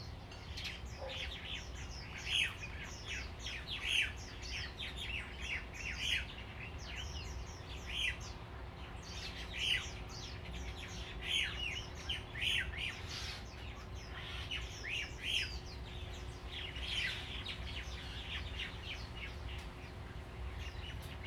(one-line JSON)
{"title": "湖埔路, Lieyu Township - Birds singing", "date": "2014-11-04 08:18:00", "description": "Birds singing, Traffic Sound, Dogs barking\nZoom H2n MS+XY", "latitude": "24.45", "longitude": "118.25", "altitude": "30", "timezone": "Asia/Shanghai"}